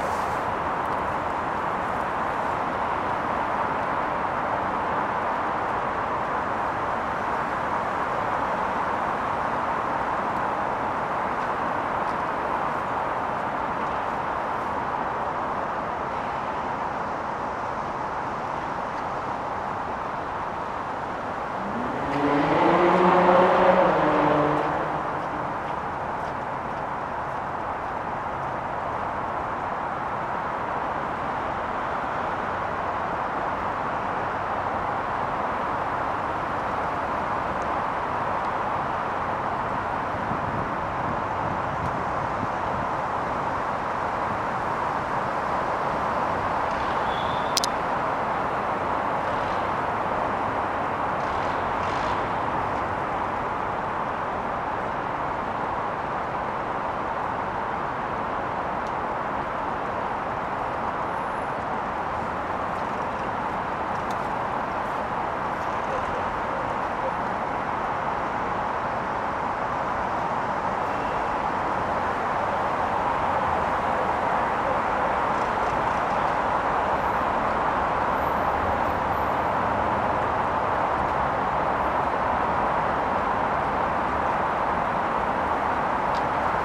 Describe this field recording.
At the entrance to the Neskuchny sad. I recorded what was happening around me. Mostly you can hear the sound of passing cars. The evening of January 27, 2020. The sound was recorded on a voice recorder.